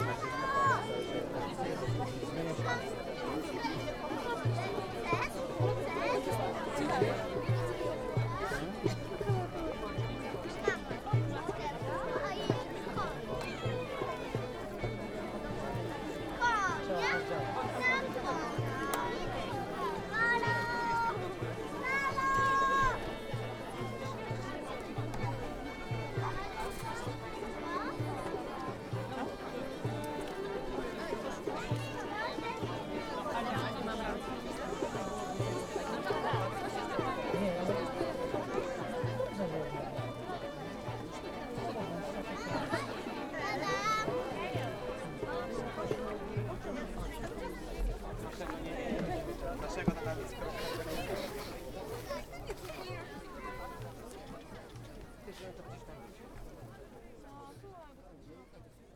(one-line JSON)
{"title": "Kraków, Zakrzówek", "date": "2011-06-05 17:30:00", "description": "Modraszkowy Zlot na Zakrzówku / environmental protest against developing one of the most beautiful green areas in Kraków into a gated community for 6000 inhabitants.", "latitude": "50.04", "longitude": "19.90", "altitude": "227", "timezone": "Europe/Warsaw"}